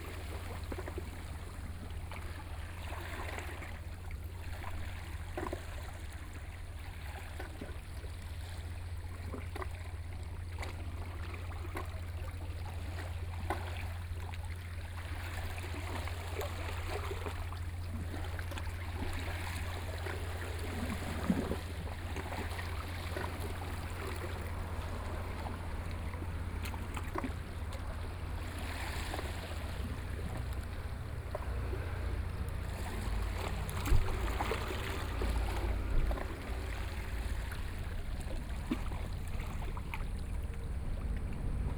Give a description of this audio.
Small village, Sound of the waves, Traffic Sound